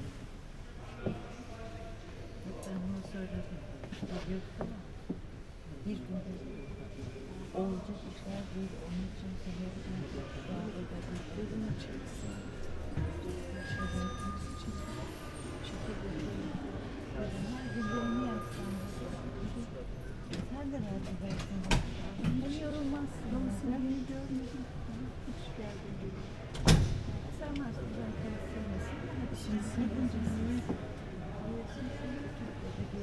Tunel underground rail, Istanbul
a small one car train runs up and down the main hill in Beyoglu
20 February